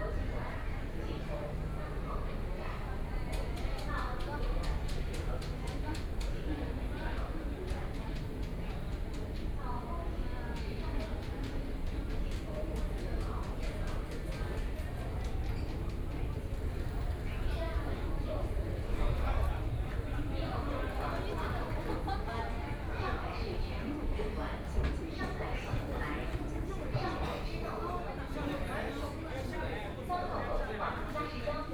walking in the station, Binaural recording, Zoom H6+ Soundman OKM II
Xuhui, Shanghai, China, 23 November 2013